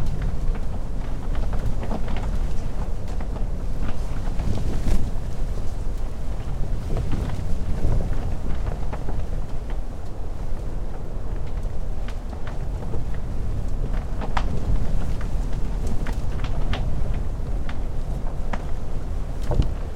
Yurt tent X sea wind at dusk
At the highest point on Gapa-do a round tent (Yurt?) has been erected...highly wind exposed without cover of trees...and looks over towards Jeju to the north and south toward Mara-do (Korea's southern most territory)...the tent design showed it's resilience in the relentless sea wind...
Seogwipo, Jeju-do, South Korea, 14 December